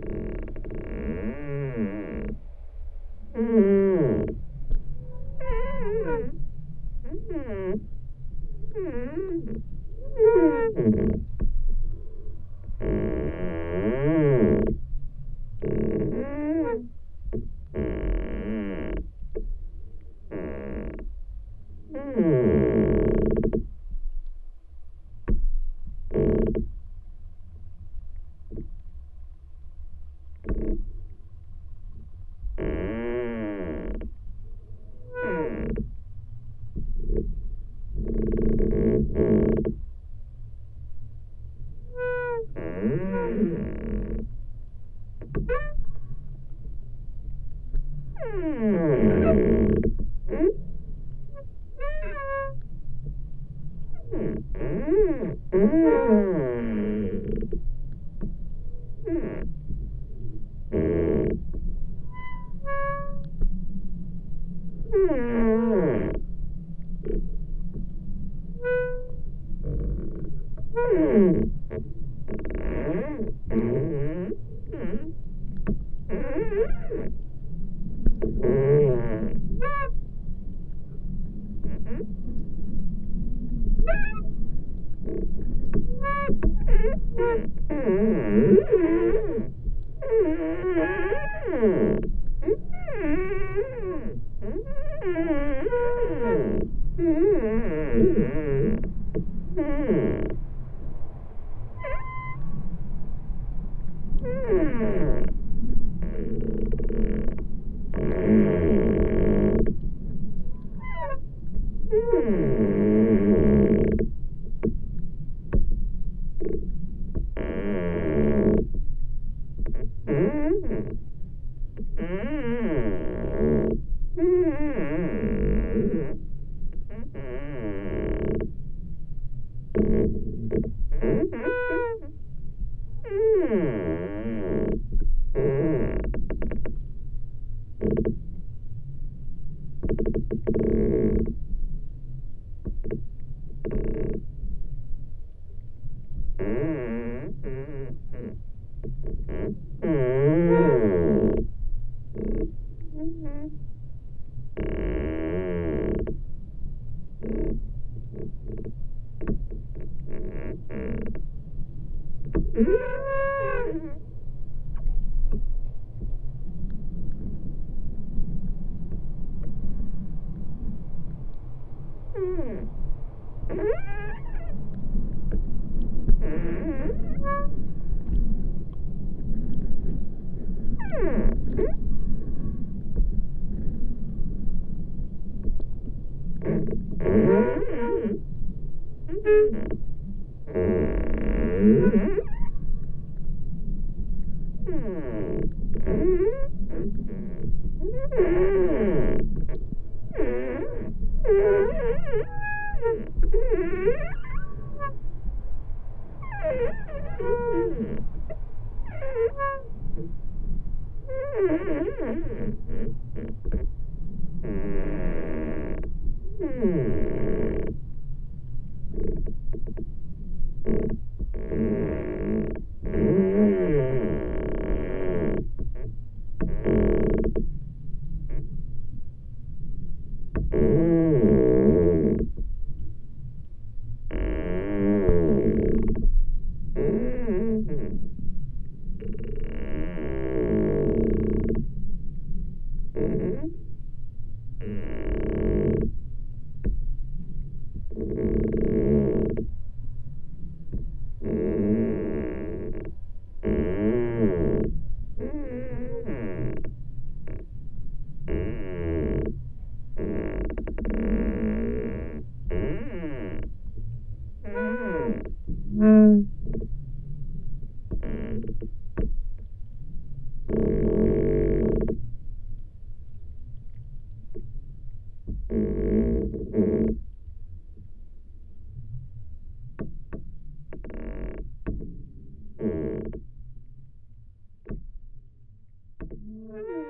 one of my favourite sounds are the sounds trees make in a wind...so one more time: the tree that is cat. contact microphones.
Utenos apskritis, Lietuva, 5 September 2020